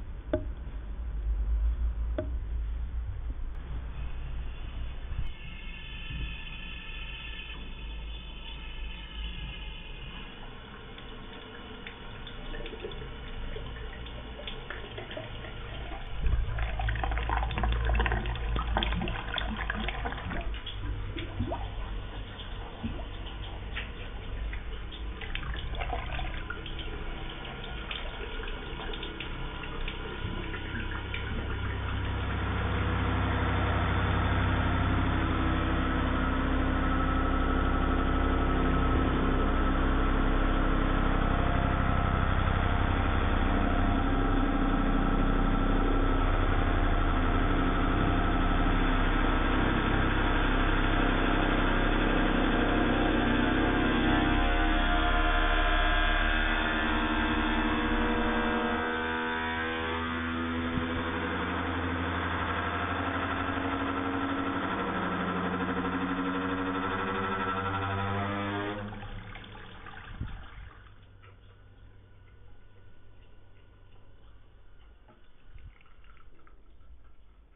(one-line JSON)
{"title": "Resslova 1, Karma the water heater", "date": "2010-06-23 14:41:00", "description": "Sound of the water heater Mora in at Resslova 1. It makes these sounds always when there are some visitors - freaking them out with its merciless roar.", "latitude": "50.08", "longitude": "14.41", "altitude": "211", "timezone": "Europe/Prague"}